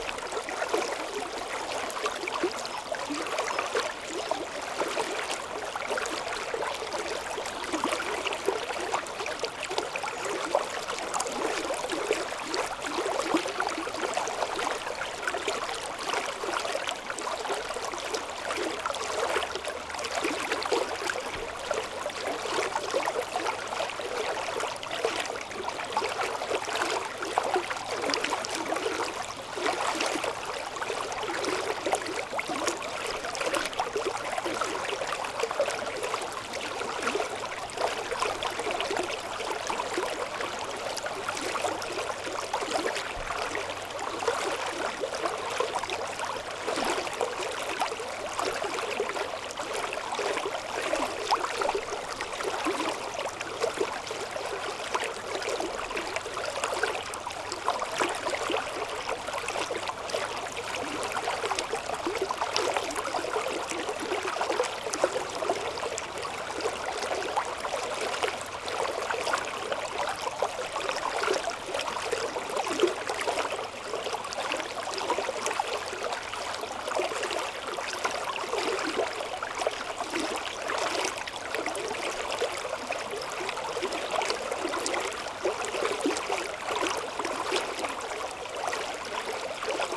Mont-Saint-Guibert, Belgique - The river Orne
Recording of the river Orne, in a pastoral scenery.
Recorded with Lu-Hd binaural microphones.